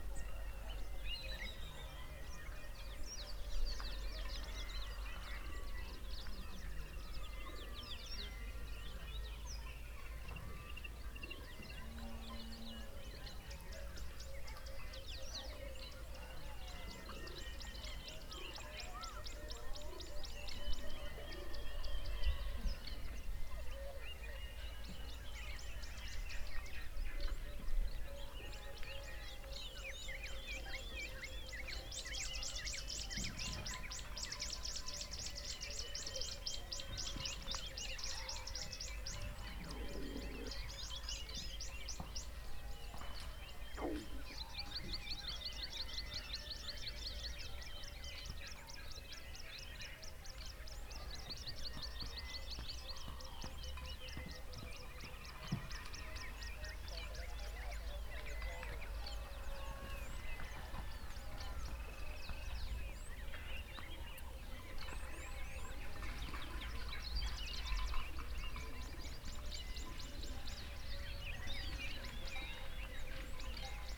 morning birds and voices of people working in the fields somewhere out there....
6 September 2018, 06:15